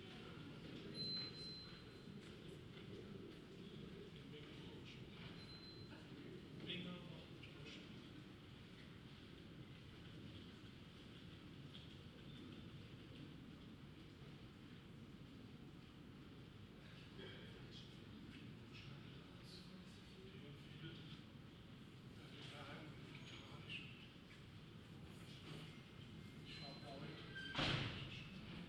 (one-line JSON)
{"title": "Münzgasse, Tübingen - Münzgasse, Tübingen 2", "date": "2019-09-24 17:45:00", "description": "Mittelalterliche Gasse mit Fachwerkhäusern, Fußgängerzone.\nKirchenglocken, Fußgänger, Fahrrad, leichter Regen.\nChurch bells, pedestrians, bicycle, light rain.", "latitude": "48.52", "longitude": "9.05", "altitude": "347", "timezone": "Europe/Berlin"}